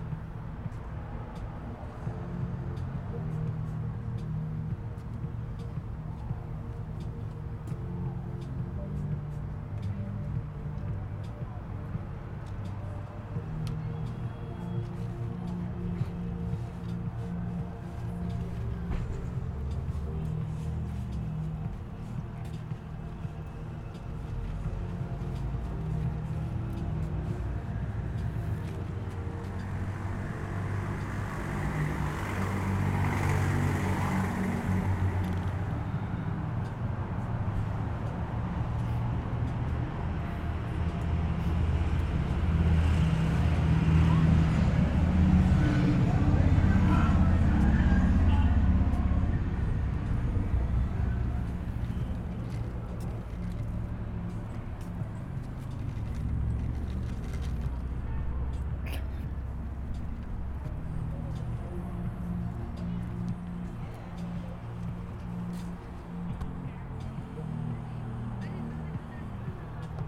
Outside of Rendezvous Bar, pedestrians walking and faint music can be heard. Recorded with ZOOM H4N Pro with a dead cat.